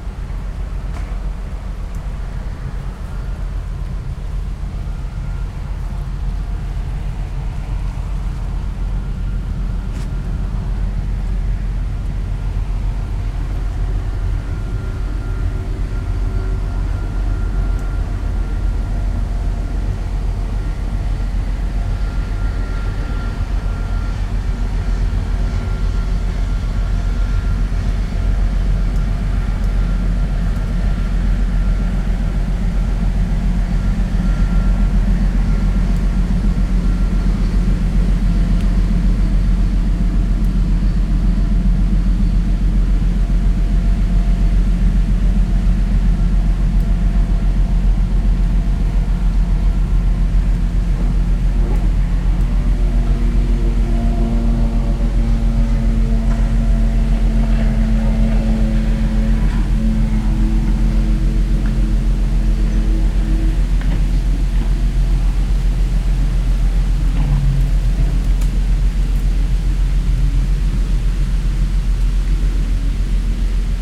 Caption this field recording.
small peninsula between river Rhein and Mülheim harbour. a cargo ship is passing downstream, wind in the trees, (Sony PCM D50, DPA4060)